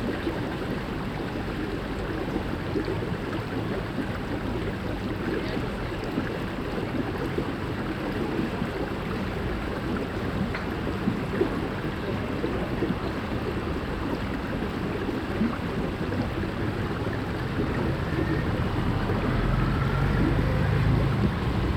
A waterspout fountain (near the bubbly drainage), a cafe with clattering tableware and chatting people at a busy crossroads, traffic, wind shaking ropes on flagpoles, in a distance workers building a stage for a campus festival, some gulls crying.
Binaural recording, Zoom F4 recorder, Soundman OKM II Klassik microphones with wind protection